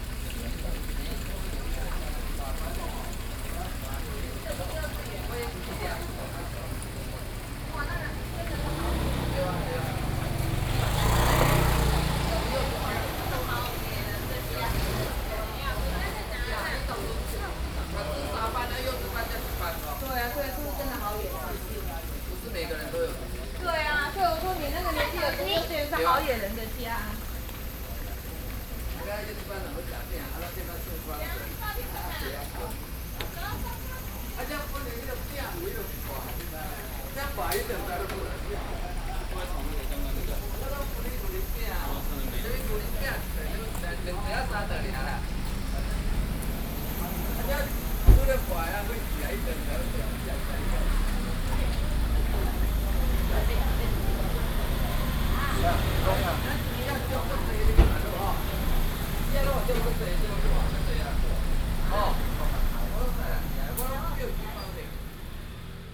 菁桐里, Jingtong St., Pingxi Dist., New Taipei City - Walking in a small alley
Walking in a small alley
Binaural recordings, Sony PCM D50
Pingxi District, New Taipei City, Taiwan, 5 June 2012